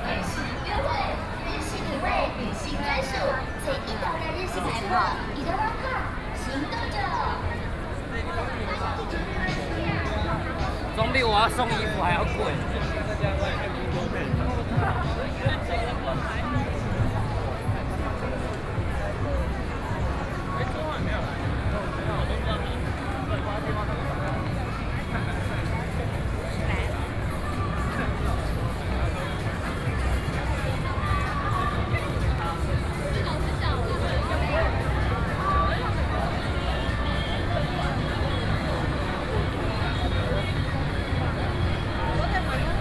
Ximending, Taipei City - soundwalk
walking from Ximen Station to Emei Street, Binaural recordings, Sony PCM D50 + Soundman OKM II
Taipei City, Taiwan, 19 October 2013, 16:44